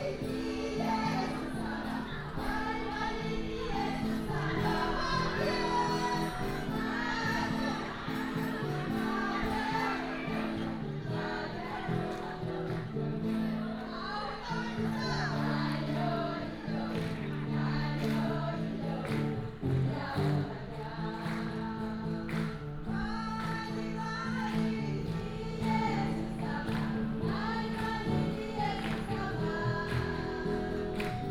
Zhengxing, Jinfeng Township 金峰鄉 - In tribal streets
In tribal streets, Paiwan people
Taitung County, Jinfeng Township